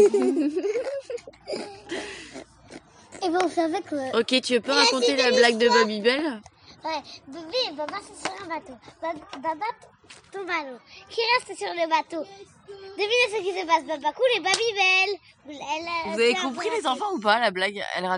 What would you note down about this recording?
children sharing jokes and funny stories in the yard of the school during summer holydays